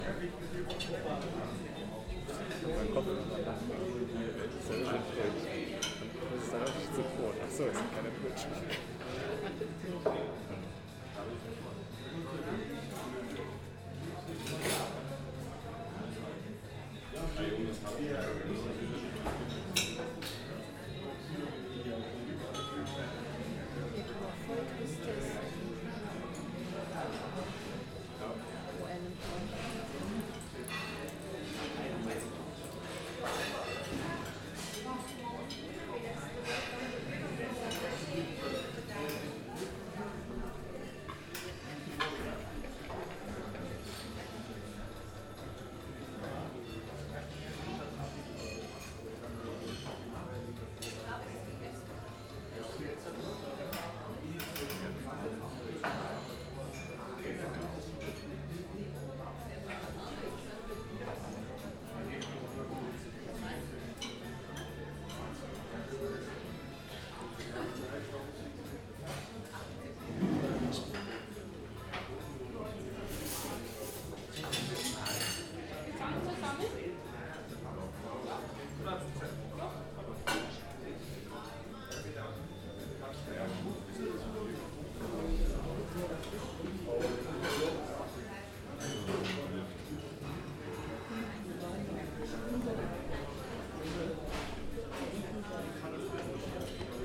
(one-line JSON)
{"title": "Großer Arbersee, Arberseestraße, Bayerisch Eisenstein, Deutschland - Im Arberseehaus", "date": "2019-12-26 11:25:00", "description": "Kurze Sequenz aus dem inneren des Arberseehaus.", "latitude": "49.10", "longitude": "13.16", "altitude": "943", "timezone": "Europe/Berlin"}